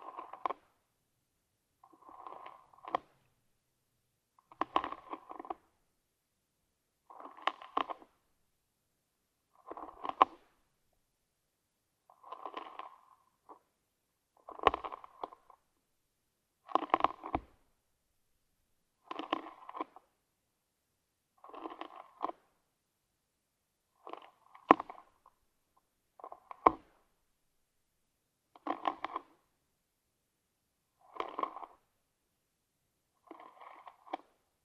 Mont-Saint-Guibert, Belgique - Famished slug eating
In the all-animals-eating collection, this recording is about a slug eating a spinach leave. I was wishing to do this on the same time of the snail recording, but this brat didn’t want to eat anything !! So, I put it in a pot during 24 hours, in a dry place, and I famished it. After this time of latency, strategy was to put it on a wet young spinach leave, as I know slug adore this kind of vegetables. I put two contact microphones below the leave, fixed on toothpicks. Slug immediately eat this banquet, making big holes in spinach.
The sound of a slug eating is clearly more flabby than a snail, but it remains quite interesting.
Mont-Saint-Guibert, Belgium, 1 June, 18:20